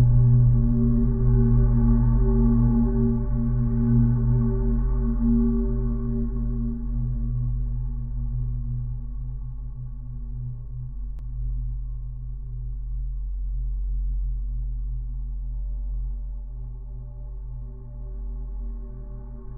Utena, Lithuania bridge railings
Bridge railings as drone source. Magnetic contact microphones.
Utenos rajono savivaldybė, Utenos apskritis, Lietuva